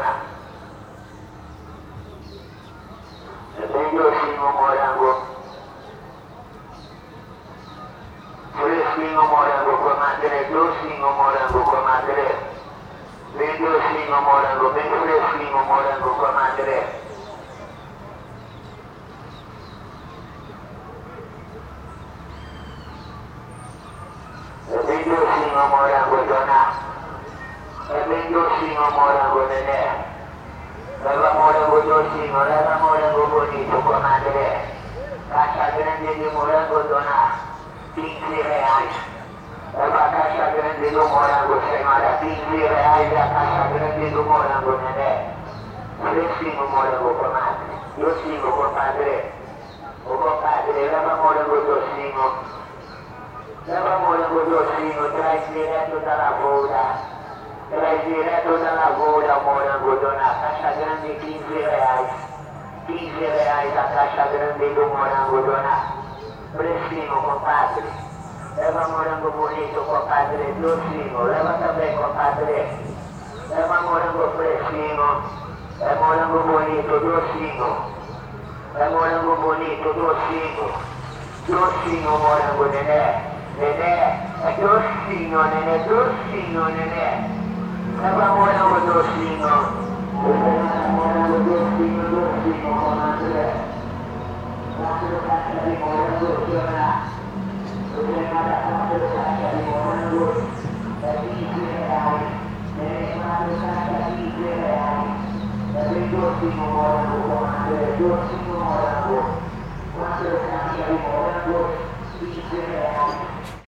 Aclimação, São Paulo - Seller from his truck announcing some strawberries
From the window of the flat, recording of a seller of strawberries above the park Aclimaçao, Sao Paulo.
Recorded by a binaural Setup of 2 x Primo Microphones on a Zoom H1 Recorder